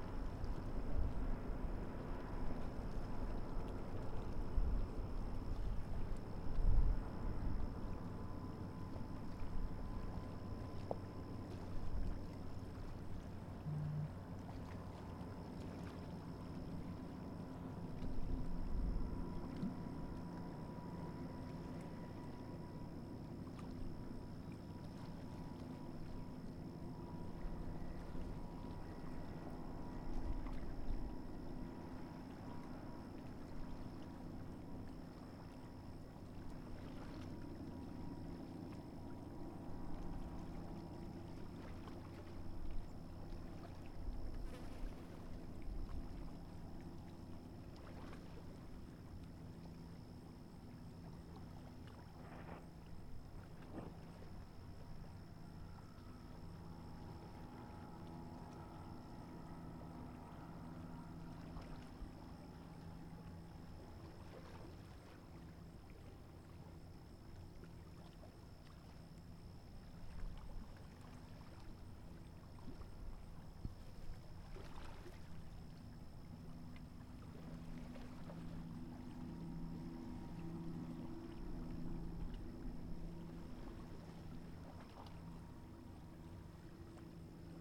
Portsmouth Olympic Harbour Marina Trail - MUSC 255 Assignment 2
This was recorded on a trail near the Portsmouth Olympic Harbour Marina in Kingston, Ontario. It's kind of near where I am living at the moment for school so I was excited to explore some of the trails today. I am recording on a ZOOM H1n with a foam microphone cover.
19 September, 14:45